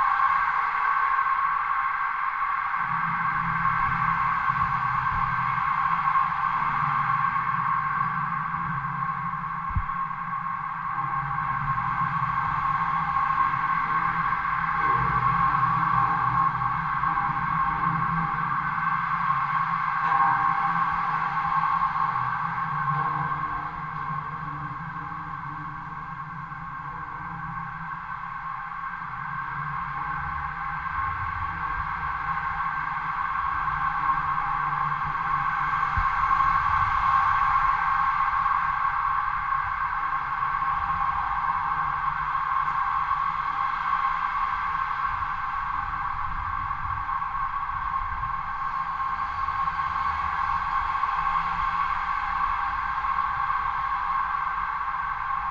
Klaipėda, Lithuania, pasangers's bridge
metallic passanger's bridge over the street. recorded with contact microphones